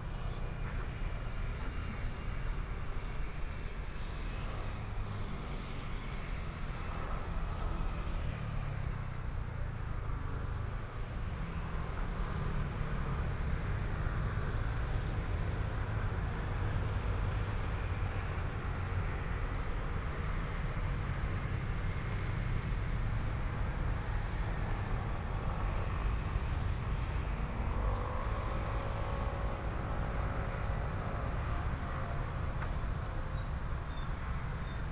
traffic sound, the train runs through